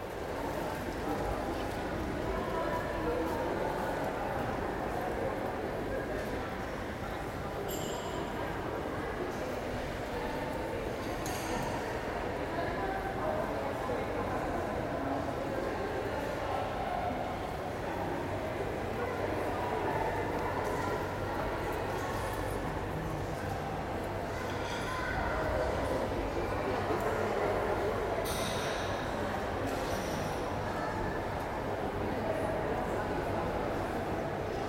mannheim main station, hall - mannheim main station, hall (2)
recorded june 29th, 2008.
part 2 of recording.
project: "hasenbrot - a private sound diary"